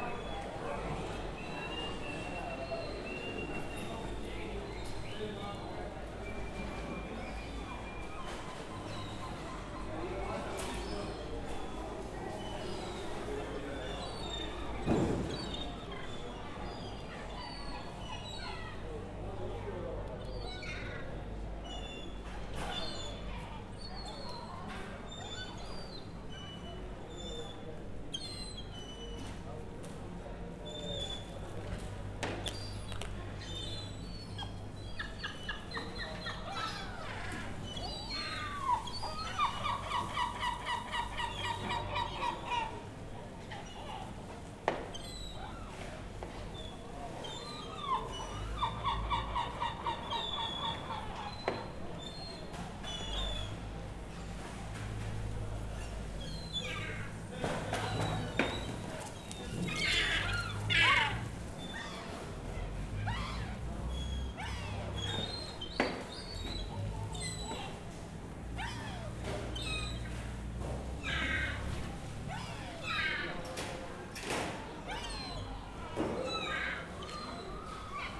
Venice, Italy - fish market
busy market activities, people, seagulls
17 September 2012